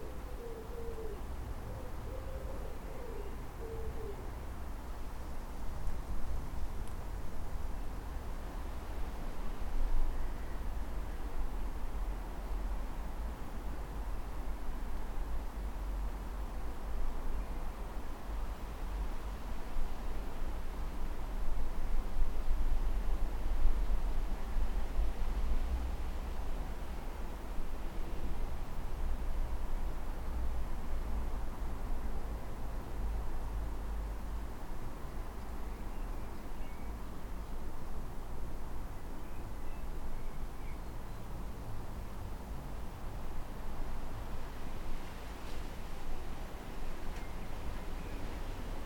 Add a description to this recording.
This recording was made in our apple tree. After a long period of ill-health, I am feeling much better, and am able to do lots more in the garden. This has led to enthusiastic planning and creativity out there, including the acquisition of three lovely chickens who now live where the ducks (may they rest in peace) formerly resided. The chickens are beauties, and the abundance of food for them has attracted many wild avian buddies to the garden too, for whom I have been creating little seed bars out of suet, nuts, mealworms and other treats. Tits - in particular little Blue-tits - and the Robins and Wrens all totally love the suet treats and so yesterday I strapped my EDIROL R09 into the branches of the tree to record their little flittings and chirps. I'm sure one of the sounds is of a Blue-tit but if any of you know differently, please help me to better understand the tiny comrades who share our garden with us.